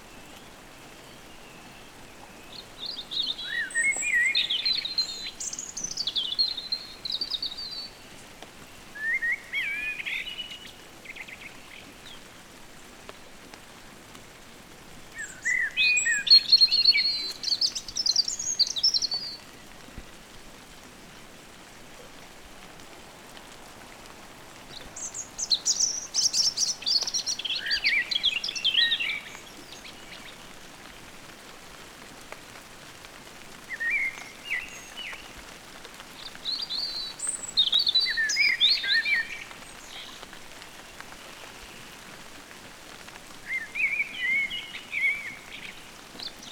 Dartington, Devon, UK - soundcamp2015dartington blackbird and robin at hall in dark